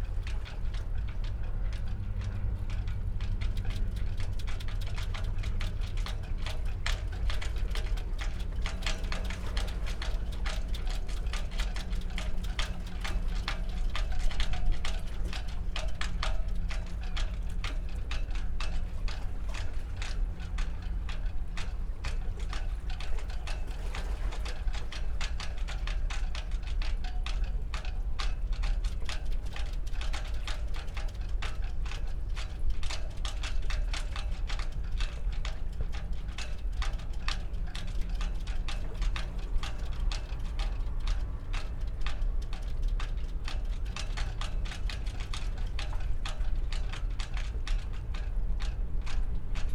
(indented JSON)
{
  "title": "Delimara, Marsaxlokk, Malta - pier, rigs ringing",
  "date": "2017-04-05 11:15:00",
  "description": "rig of a small boat ringing in the wind\n(SD702, DPA4060)",
  "latitude": "35.83",
  "longitude": "14.55",
  "timezone": "Europe/Malta"
}